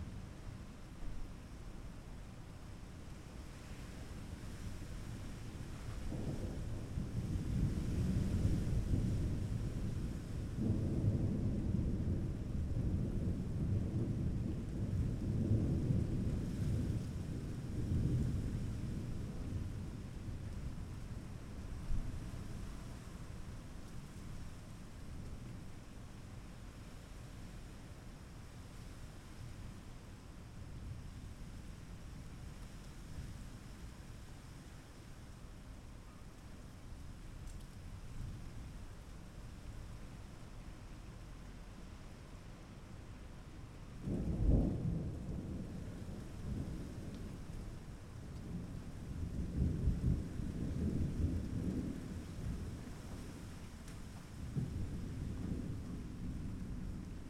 Chem. la Tessonnière den Bas, La Motte-Servolex, France - ORAGE LA TESSONNIERE STORM THUNDER
Thunder and rain on late afternoon in la Tessonnière d'en bas, in la Motte Servolex. The town where I grew up.